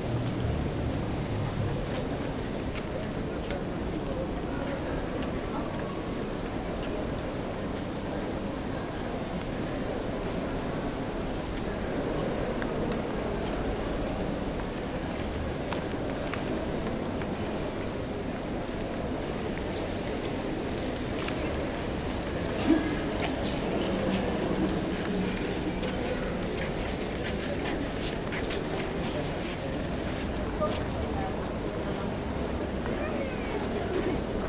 Santiago, Santiago Metropolitan Region, Chile - Sweaty Feet During Rush Hour
In the Santa Ana Metro Station in Santiago, people walk fast to get their trains. It is summertime so many people wear flip flops and some of them sounds sweaty...